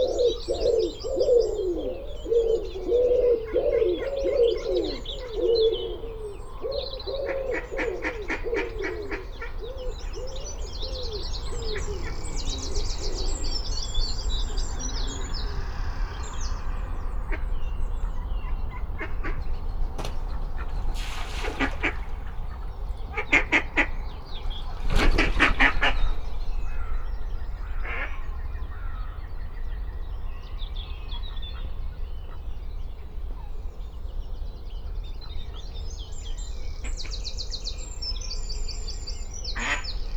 My Home Place, Rifle Range Road + Avon Rd, Kidderminster, Worcestershire, UK - Worcestershire Morning
Recorded in my back garden in Malvern but dedicated to this spot. This was outside the prefab I lived in as a child and where I played in the road with my pals. 70 years ago and hardly any cars meant ball games could be enjoyed and siting on the kerb with feet in the gutter was a pleasure. Once I rode down Rifle Range Road on my bike turned right into Avon Road hit the kerb and sailed clean across the footpath into our garden fence which bounced me safely to a stop.
England, United Kingdom, 18 April